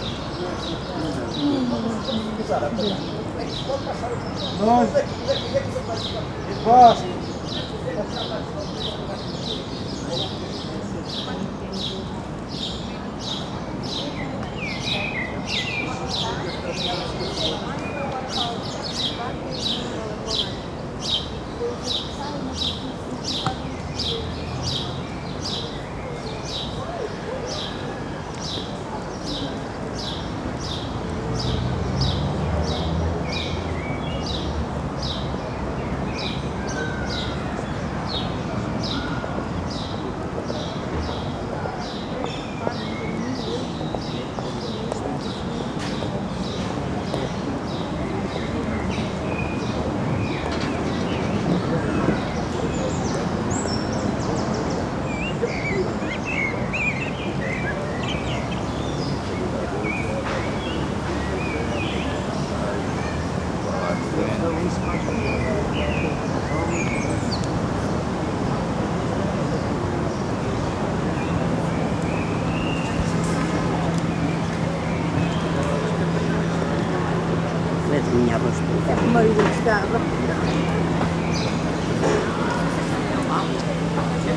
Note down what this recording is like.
Jardim da estrela ambience, brids, people